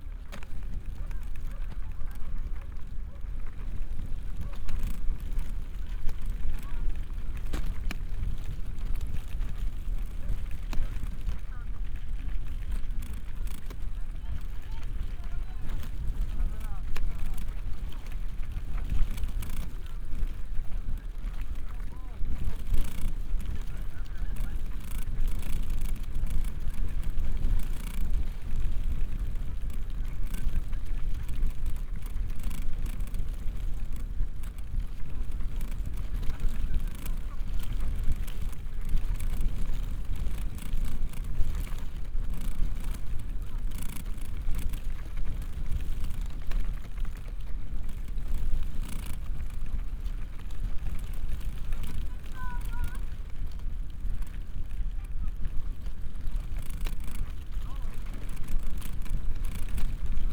Sasino, beach entrance - wilted leaf
sitting on a beach with my ear very close to a small, wilted leaf jiggling in the wind. (sony d50 + luhd pm-01bins)